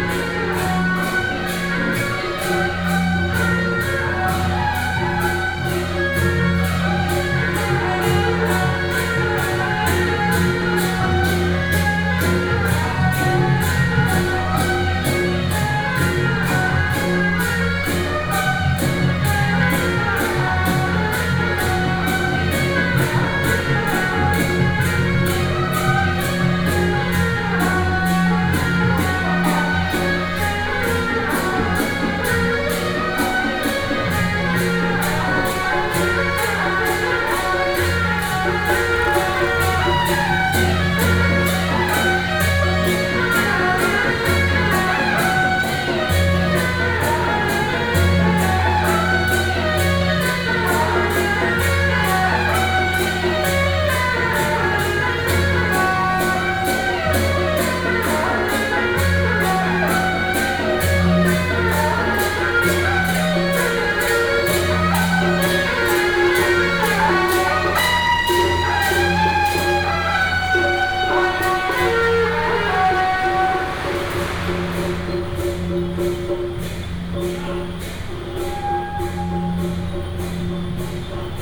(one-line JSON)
{"title": "台北大橋, 大同區, 台北市 - Under the bridge", "date": "2017-06-05 19:05:00", "description": "Traditional temple festivals, Under the bridge, “Din Tao”ßLeader of the parade, Firecrackers", "latitude": "25.06", "longitude": "121.51", "altitude": "12", "timezone": "Asia/Taipei"}